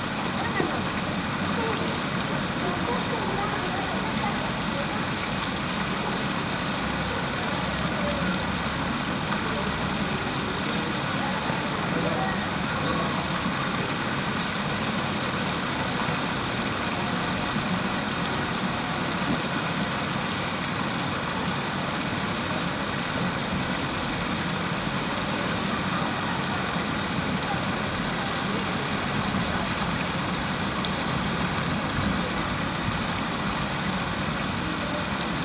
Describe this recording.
a quiet square in rouen on a sunday aftternoon - passersby and doves, but all businesses closed